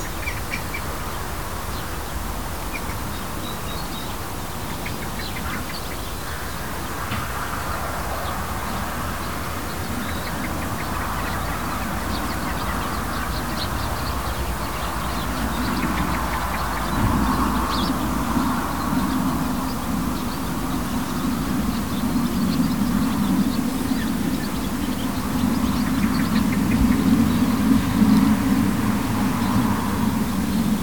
Maybe some sheep and bees
Tascam DR40, built-in mics
Newtownards, UK, 23 August 2017, ~09:00